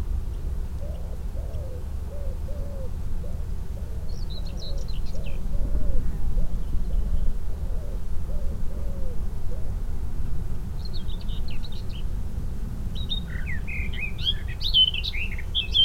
Courcelles, Belgique - On the fields during summer
Eurasian Blackcap singing into a thicket, Dunnock and Yellowhammer singing into the fields.
Courcelles, Belgium, 3 June 2018, 13:30